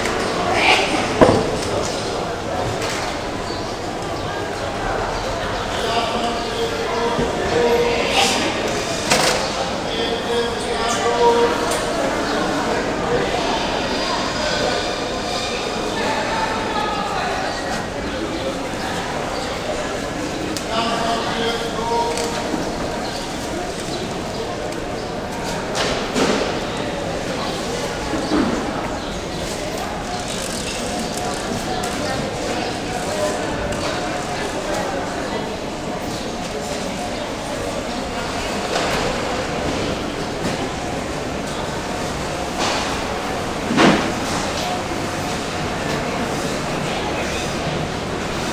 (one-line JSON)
{"title": "Mercado da Ribeira, São Paulo, Lisbon, Portugal - Mercado da Ribeira revisited", "date": "2008-01-03 09:27:00", "description": "Inside the Mercado da Ribeira.", "latitude": "38.71", "longitude": "-9.15", "altitude": "7", "timezone": "Europe/Lisbon"}